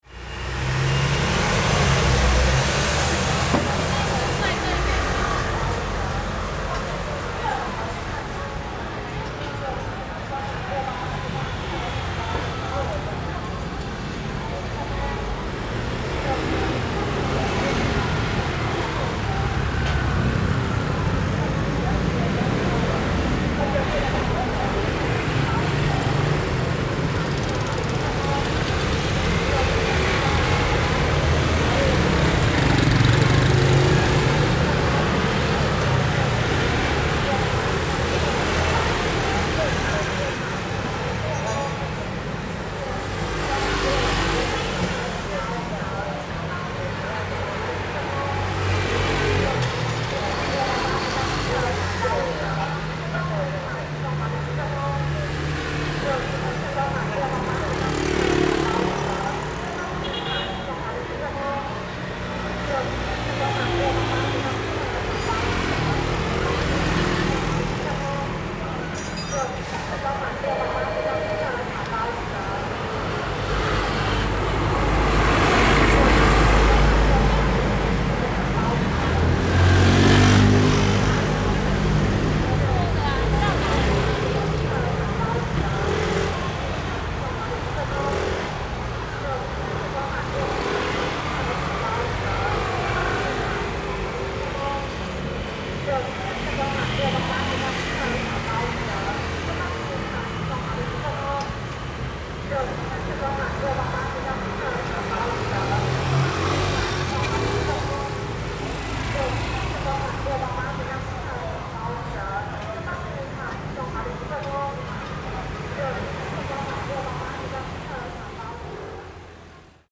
Xintai Rd., Xinzhuang Dist., New Taipei City - Traffic Sound
Traffic Sound
Zoom H4n +Rode NT4